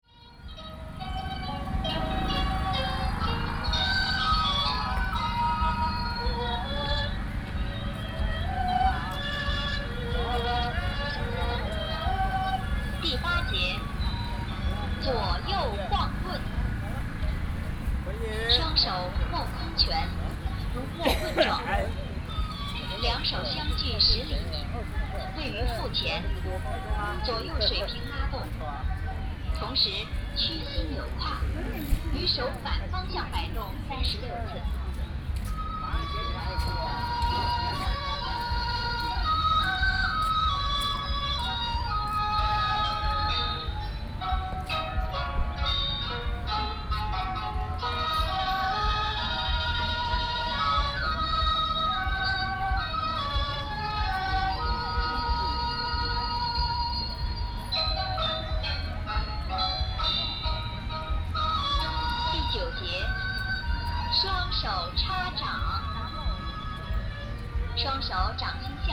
Zhongshan Park, Pingtung City - in the Park
Morning exercise in the park for the elderly